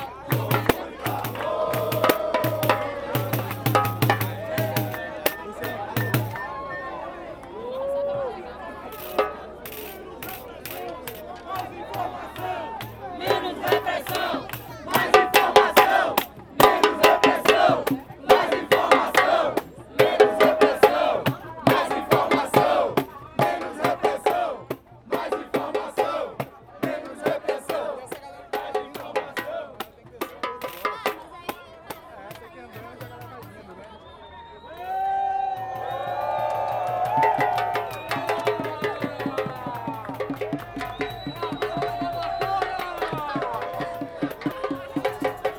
Salvador, Bahia, Brazil - Marijuana March
In the middle of an extremely peaceful legalise marijuana march in Salvador, Brazil.